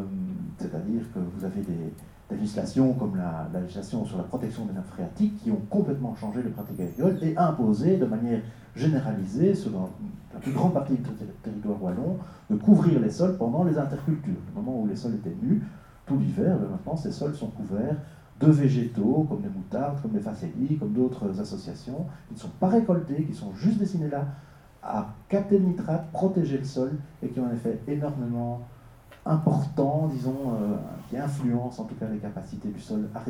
Namur, Belgique - Seminar
A seminar about agricultural erosion, flooding and sludge disasters. Orator is very specialized in this thematic.